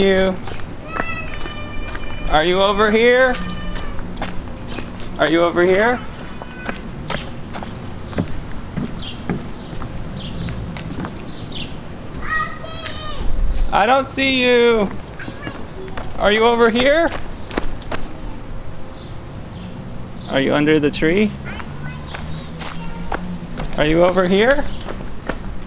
Hot, summertime, sprinkler in backyard, dad & 2-1/2 year old girl playing hide & seek... birds, cta train, air conditioner.
Illinois, United States of America, 18 July 2013, ~12:00